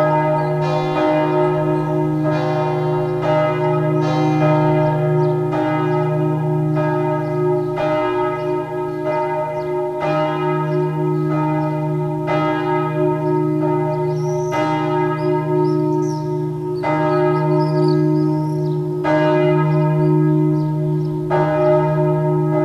Nevers, rue des Ardilliers, the bells after the Mass.
Minidisc recording from 1999.